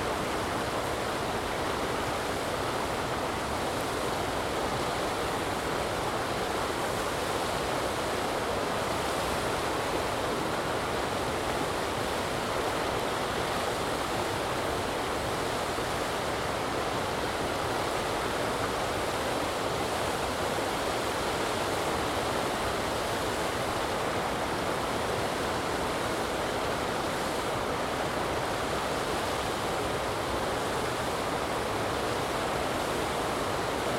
Concrete man-made waterfalls. Recorded with Zoom H2n (XY, gain on 10, on a small tripod, handheld) from the northern bank.
Koprivničko-križevačka županija, Hrvatska, 21 March 2021